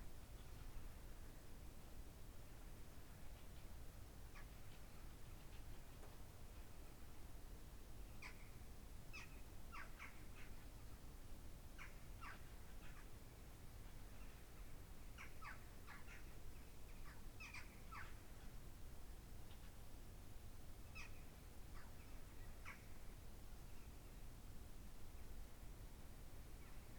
{"title": "Sollefteå, Sverige - Birds at dawn", "date": "2012-07-19 03:41:00", "description": "On the World Listening Day of 2012 - 18th july 2012. From a soundwalk in Sollefteå, Sweden. Birds at dawn in Sollefteå. WLD", "latitude": "63.16", "longitude": "17.30", "altitude": "33", "timezone": "Europe/Stockholm"}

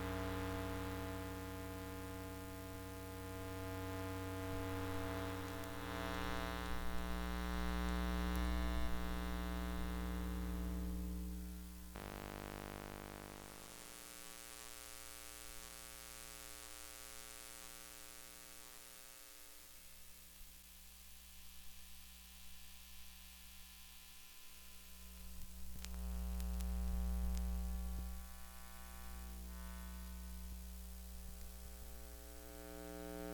{"title": "Stena Line, North Sea - deck 09", "date": "2022-08-26 12:19:00", "description": "sound walk on deck 09", "latitude": "51.90", "longitude": "2.26", "timezone": "Europe/London"}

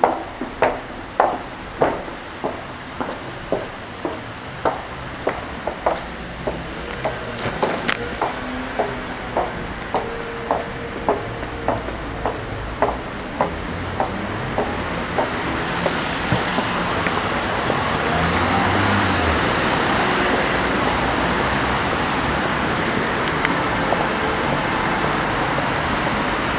{"title": "Milano, Italy - steps and voices in the underground", "date": "2012-11-10 12:44:00", "description": "pedestrian underground tunnel, way out from the underground to the street. sound of voices and steps. both fade out in the noisy traffic when they reach the street level.", "latitude": "45.48", "longitude": "9.22", "altitude": "126", "timezone": "Europe/Rome"}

